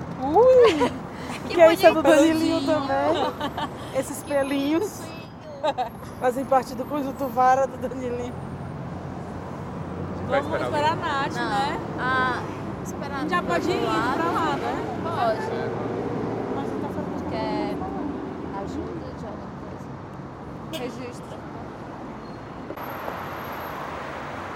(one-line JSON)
{"title": "Paulista Avenue, Sao Paulo - São Paulo, Brazil - Av. Paulista", "date": "2011-09-03 21:30:00", "description": "Paisagem Sonora da Avenida Paulista\nSoundscape Paulista Avenue.", "latitude": "-23.57", "longitude": "-46.65", "altitude": "836", "timezone": "America/Sao_Paulo"}